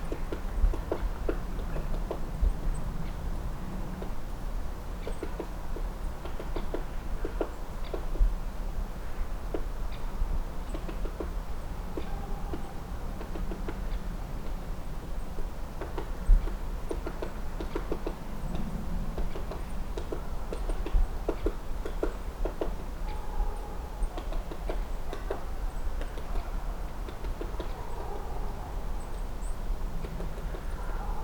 a nature reserve Morasko - woodpecker